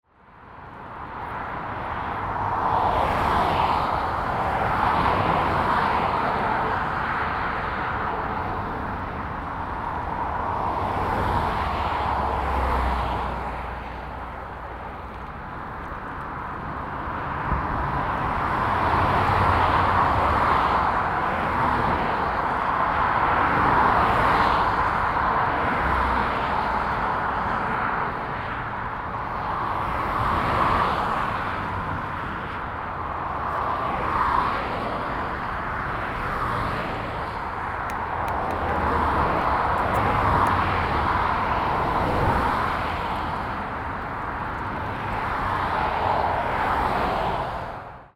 Newtimber, West Sussex, Royaume-Uni - BINAURAL Cycle track beside the Highway!
BINAURAL RECORDING (have to listen with headphones!!)
Cycling on a cycle track beside the highway!